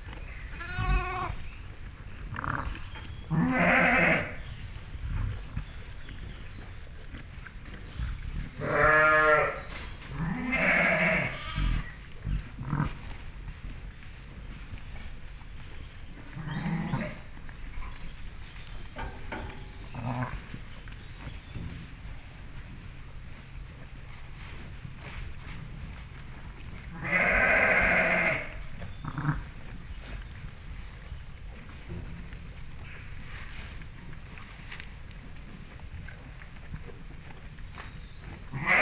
New Born Lambs in the lambing shed. - Bredenbury, herefordshire, UK
2 hour old lamb with its mother in the lambing shed with others. Recorded on the floor of the shed very close to the lamb and ewe with a Sound Devices MIx Pre 3 and 2 Beyer lavaliers.